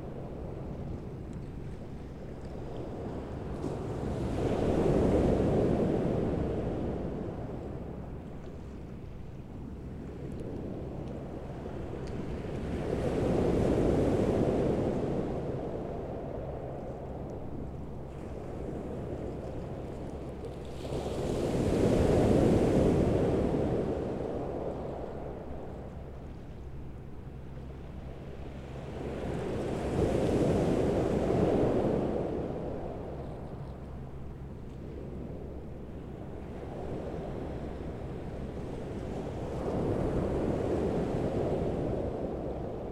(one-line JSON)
{"title": "Allée Lecourtois, Saint-Pair-sur-Mer, France - 008 AMB MER ST PAIR SUR MER GROSSES VAGUES MIX PRE 6 HAUN MBP 603 CARDIO ORTF", "date": "2021-05-26 17:39:00", "latitude": "48.81", "longitude": "-1.57", "altitude": "6", "timezone": "Europe/Paris"}